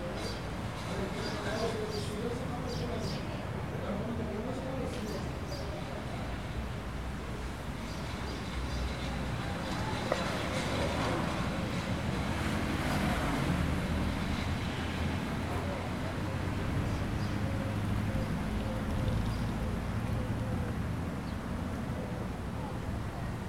A brief recording of a bustling, colourful, friendly flea market in the Captial of Fuertaventura, Rosaario, Spain.